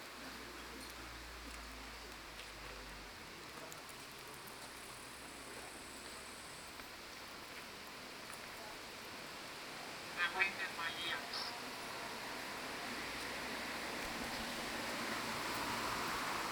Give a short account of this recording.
Weiss/Weisslisch 11e, performance Peter Ablinger